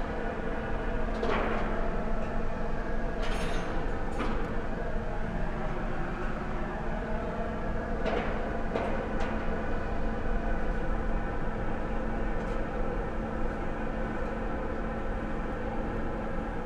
Maribor, Tezno, industrial area - exhaust and workers
Tezno industrial area, no one on the streets here. noisy exhaust on a yellow building, workers on a scaffold. the exhaust produces standing waves, a slight change of position changes the sound at that location too.
(SD702, AT BP4025)
Maribor, Slovenia, 28 May 2012